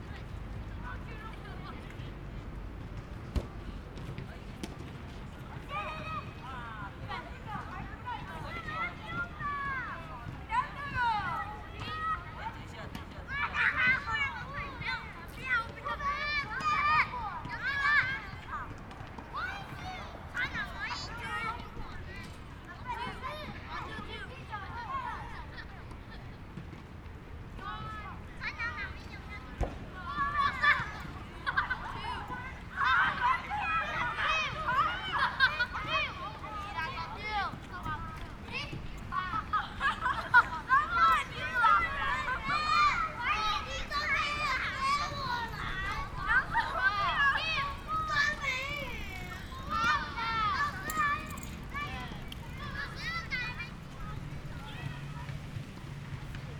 In the park people do all kinds of ball games, Children's game sound, Tennis sounds, Students are playing basketball, Traffic Sound, Zoom H6 M/S, +Rode Nt4